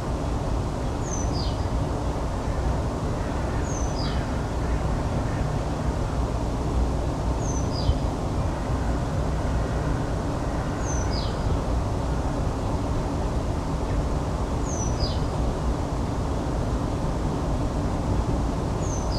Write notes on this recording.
Crows and other birds speak to each other in the reverberant place. Their voices woke me up. It is cloudy. One of the crows fly by near my recording spot. There is a noise of the huge twenty lane highway (Leningradsky Prospekt) on the background. Trees have no leafs yet, so you can clearly hear the traffic that circa 700 meters away from the recording spot. Recorded on Zoom H5 built-in X/Y stereo microphone by hand.